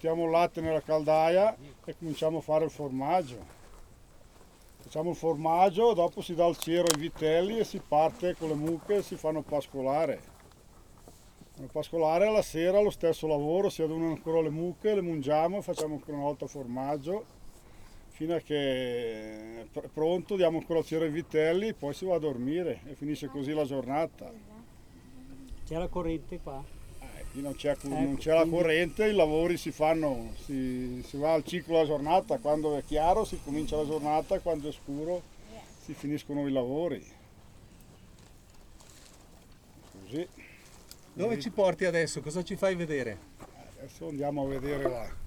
Valdidentro SO, Italia - shepherd presentation
Bormio, Province of Sondrio, Italy